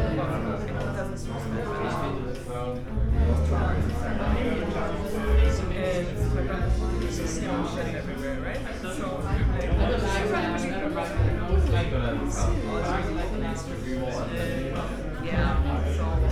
weincafe, friedelstr., berlin, a cold and gray spring day, early evening, cafe ambience. connecting a moment in time with nother one in the future. for franca.